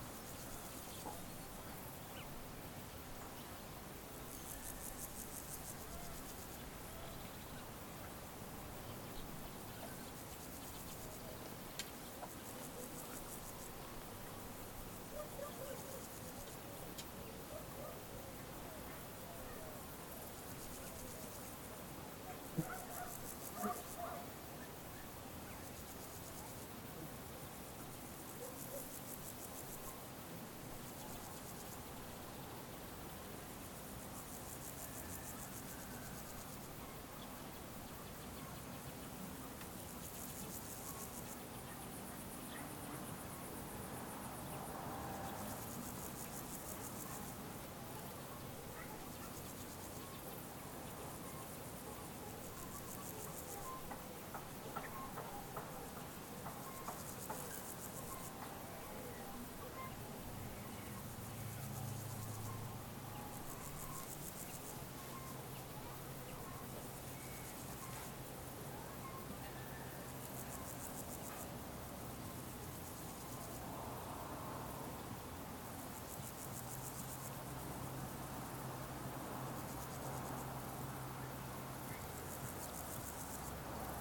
Unnamed Road, Sic, Romania - Resting on the grass on a summer day
Recording made while sitting on the grass in the summer in a small village in Transylvania.